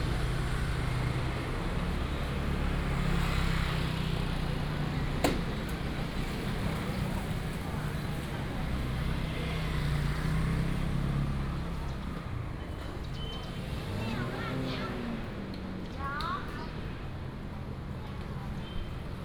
Zhongshan Rd., Tuku Township - Walking on the road
Small town, Traffic sound, market
3 March 2017, 10:45